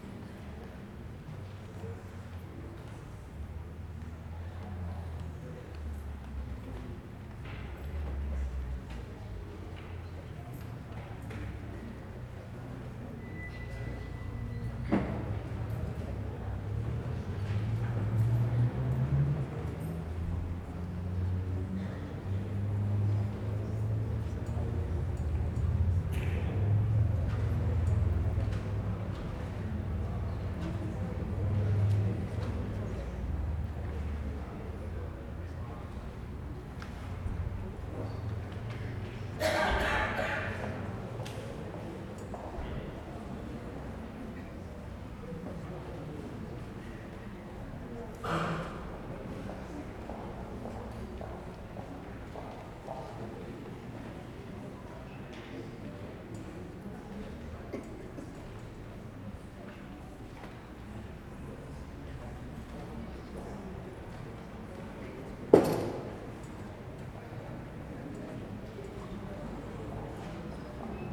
Northcote, Auckland, New Zealand - St. Mary's Catholic Church Before Mass
This is recording just 10 to 15 mins before mass starts where people are just walking in, they had a special gong that day I wish I could've recorded it.
18 March 2012, 16:50